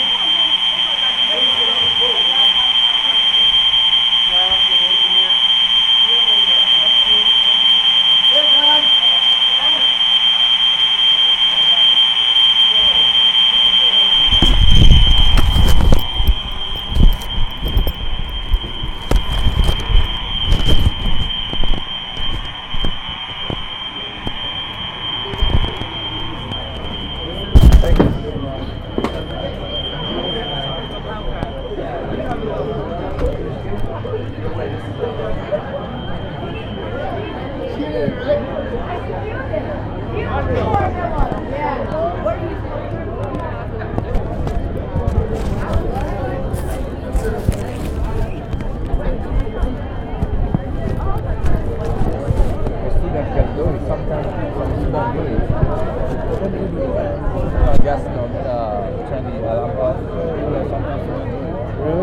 1145 Wilson, chicago: TRUMAN COLLEGE during Fire Drill
During my arabic class, firedrill, people hanging around outside, truman college, chatter